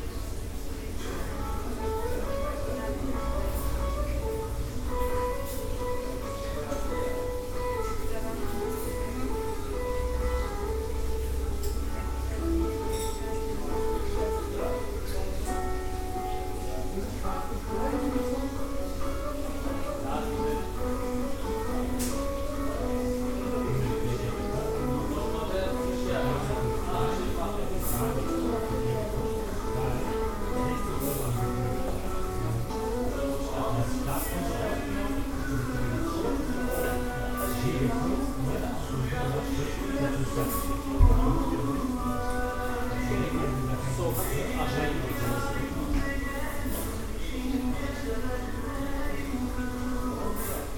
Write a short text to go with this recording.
öz urfa ocakbasi, altenessener str. 381, 45326 essen